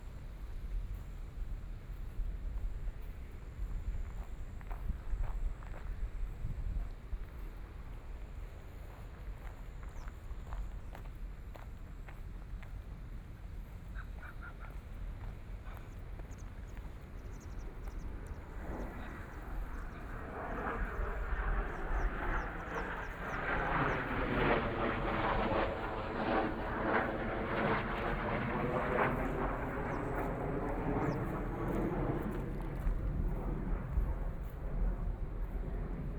Taitung City, Taiwan - Walking along the river
Walking along the river, Fighter flight traveling through, Zoom H6 M/S
Taitung County, Taiwan, 15 January 2014